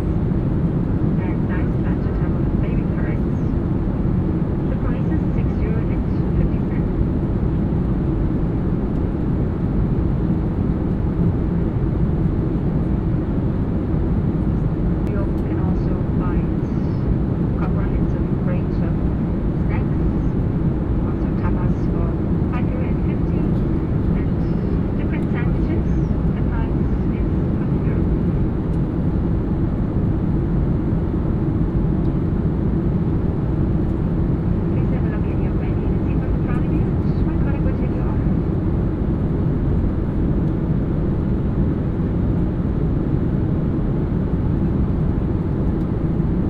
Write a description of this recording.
flight crew member talking about food options during a flight to Berlin.